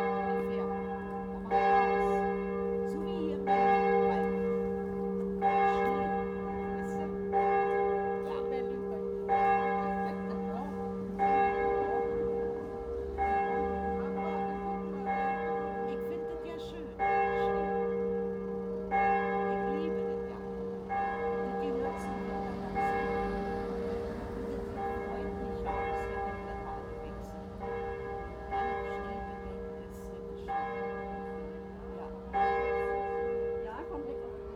Listening to the midday bells as conversations and all else goes by.